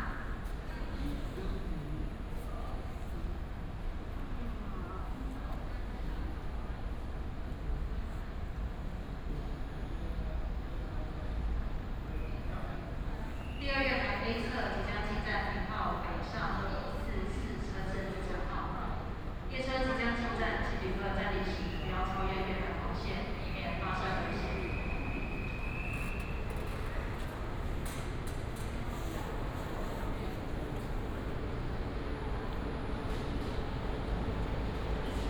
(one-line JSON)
{"title": "臺中火車站, Taichung City - At the station platform", "date": "2017-04-29 19:33:00", "description": "At the station platform, Station information broadcast, Train arrived at the station", "latitude": "24.14", "longitude": "120.69", "altitude": "79", "timezone": "Asia/Taipei"}